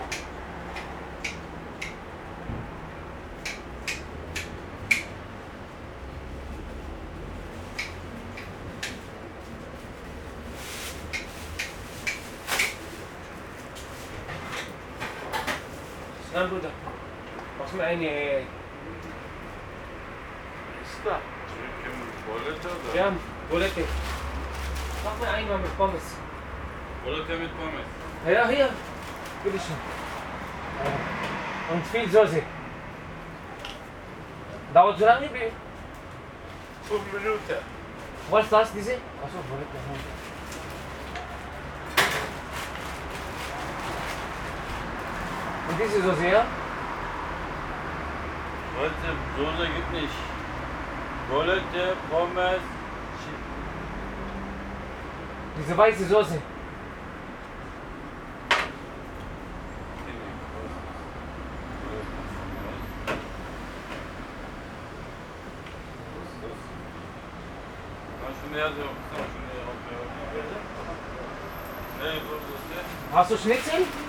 strange conversation between staff member and guest
the city, the country & me: november 9, 2012
Berlin, Germany, 9 November 2012